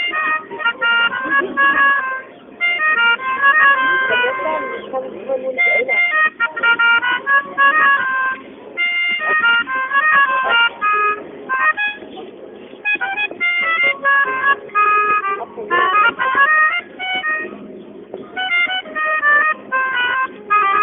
13.04.2008 13:50, Sunday afternoon, a fat man sits at the quayside and hits and misses his way through popular tunes.